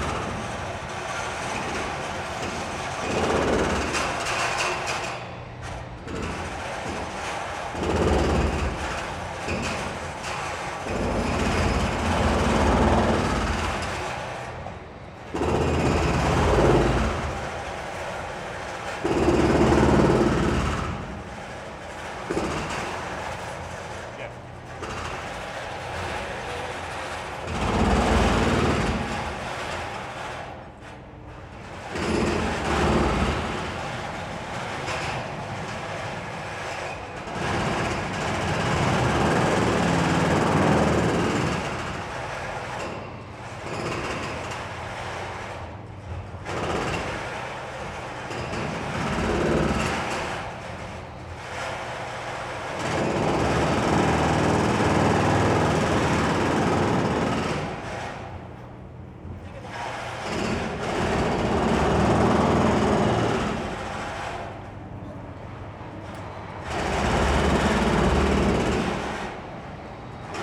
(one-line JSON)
{"title": "Extraodinarily loud building site, Queen Victoria St, London, UK - Extraordinarily loud building site amplified by the narrow passage", "date": "2022-05-17 17:08:00", "description": "The narrow passageway between the site and the City of London School amplifies the drilling and demolition sounds to even higher levels.", "latitude": "51.51", "longitude": "-0.10", "altitude": "17", "timezone": "Europe/London"}